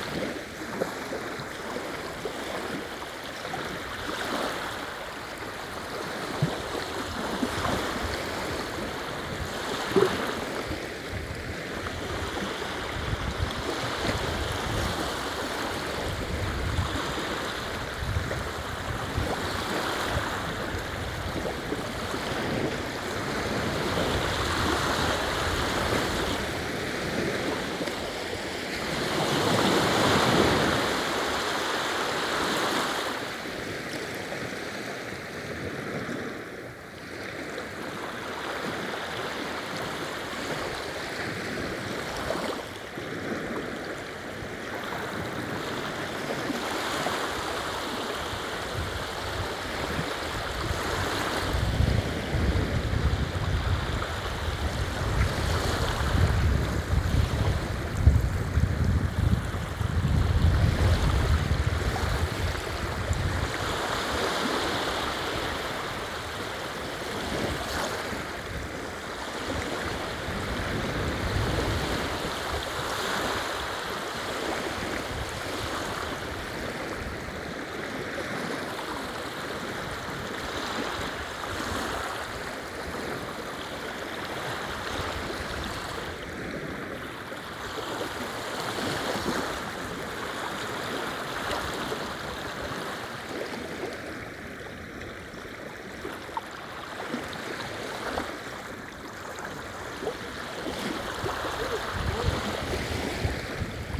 Recording of waves at the beach. This has been done simultaneously on two pairs of microphones: MKH 8020 and DPA 4560.
This one is recorded with a pair of Sennheiser MKH 8020, 17cm AB, on Sound Devices MixPre-6 II.
województwo pomorskie, Polska, 30 September 2021